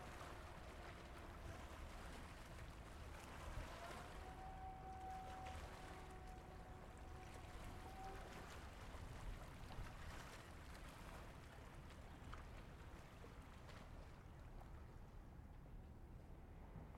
P.E. Tegelbergplein, Amsterdam, Nederland - Wasted Sounds Sporenburg
Wasted Sounds from an old harbor that transformed into a residential area.